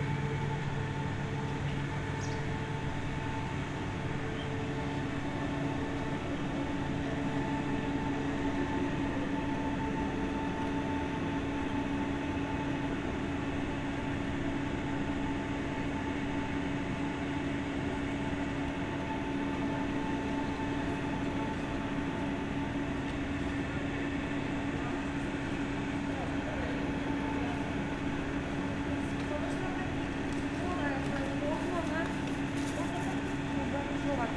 moving in between noises from various ventilations and air conditioners, in the yard behind UNI hotel
(SD702, Audio Technica BP4025)